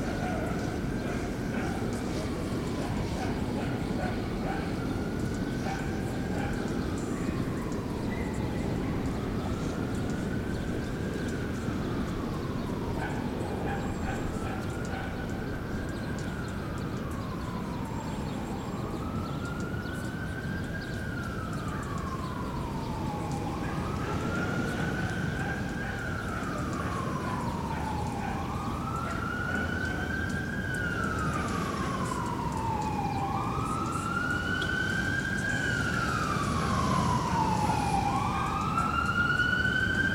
Saint Nicholas Park, Harlem, Street, New York, NY, USA - Saint Nicholas Park Ambience
Ambient sounds in Saint Nicholas Park, Harlem, NYC. Dogs barking, distant conversation, ambulance siren, birds singing, planes flying by, car horns honking, and a passing bicycle rider walking their bike. Partly sunny, light wind, ~55 degrees F. Tascam Portacapture X8, A-B internal mics facing north, Gutmann windscreen, Ulanzi MT-47 tripod. Normalized to -23 LUFS using DaVinci Resolve Fairlight.